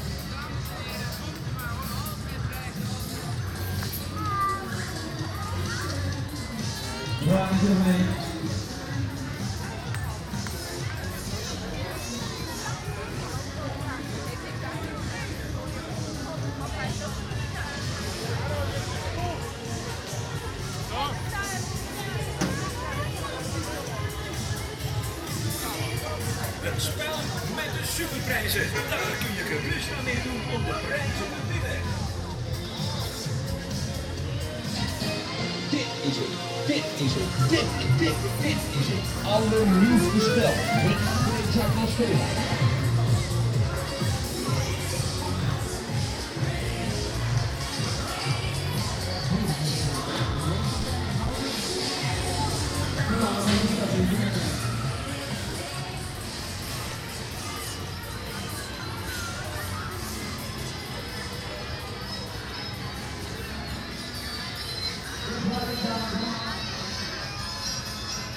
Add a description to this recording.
Koninginnekermis, Den Haag. The 'Queens fair', an annual fair that takes place around Queens day (April 30th)